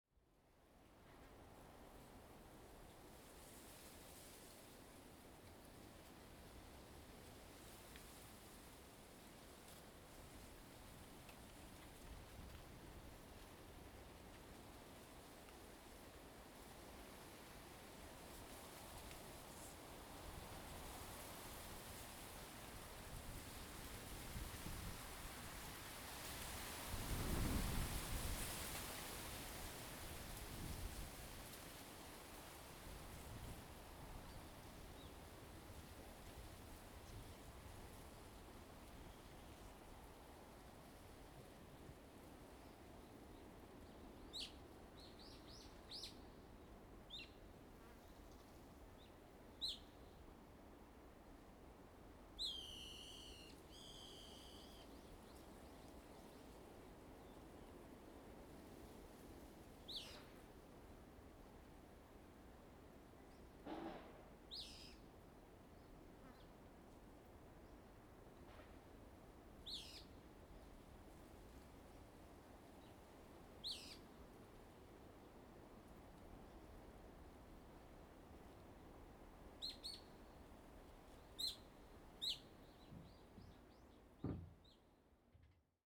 In the woods, Birds singing, the wind
Zoom H2n MS +XY
Lüdao Township, Taitung County, Taiwan, 30 October 2014